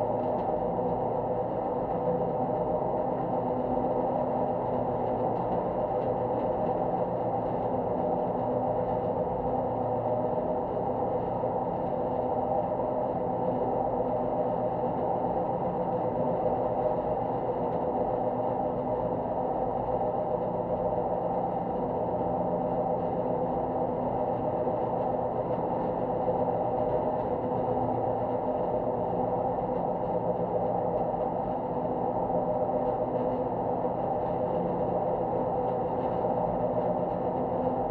contact microphones connected to a running tumble dryer
(Sony PCM D50, DIY Contact Mics)